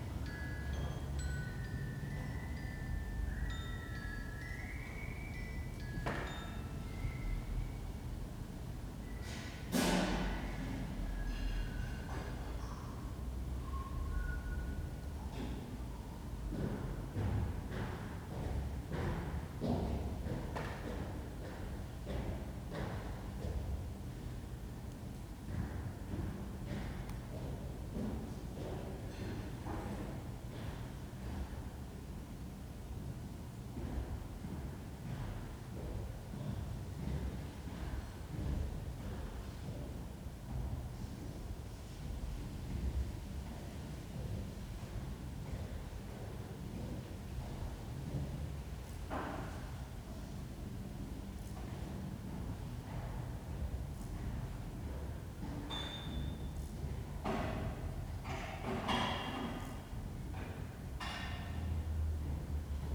Hiddenseer Str., Berlin, Germany - Accidental Spaghetti Western soundtrack through the window
An uplifting surprise. Unknown, but tuneful, phone chimes through an open window together with the heating system tone and even someone whistling briefly. Accidental ingredients for a Spaghetti Western soundtrack suddenly come together just outside my window. All enveloped in the quiet air blast of the heaters still in operation even though it's 27 degrees C. Am instantly reminded of Ennio Morricone and the chimes in the shootout scenes for 'A Few Dollars More' and 'The Good, the Bad and the Ugly'. His was one of two musical deaths that have touched me greatly in the last days. The other was Peter Green. All my thanks and best wishes to both of them.